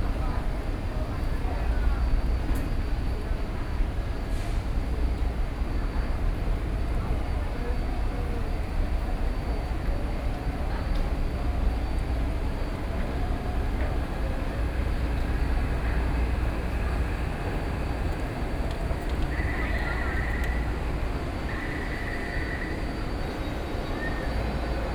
Chiang Kai-Shek Memorial Hall Station - soundwalk
in the MRT stations, From out of the station platform, Sony PCM D50 + Soundman OKM II